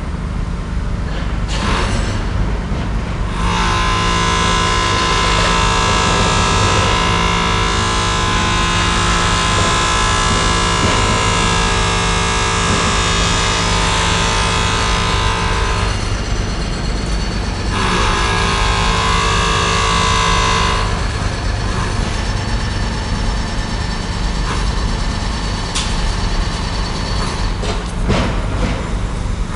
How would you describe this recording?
Recorded with a pair of DPA 4060s and a Marantz PMD661.